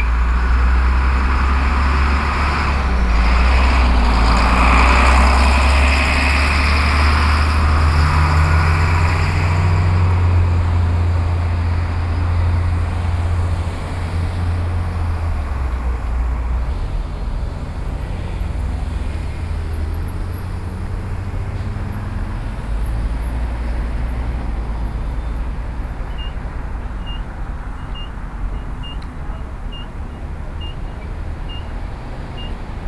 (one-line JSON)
{"title": "Austin, N Congress Avenue, Bus Stop", "date": "2011-11-11 18:50:00", "description": "USA, Texas, Austin, Bus Stop, Bus, Crossroad, Road traffic, Binaural", "latitude": "30.27", "longitude": "-97.74", "altitude": "157", "timezone": "America/Chicago"}